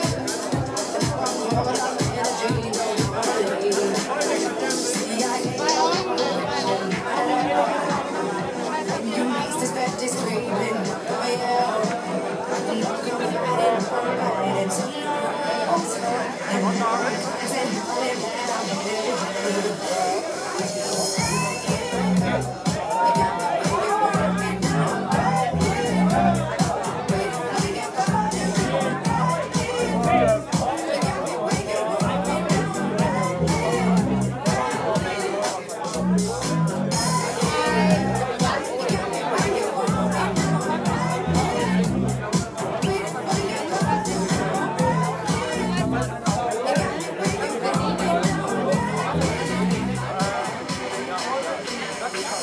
berlin, lützowstraße: kumpelnest - the city, the country & me: partying people
partying people at one of the most famous bars/clubs in berlin
the city, the country & me: may 25, 2015
Berlin, Germany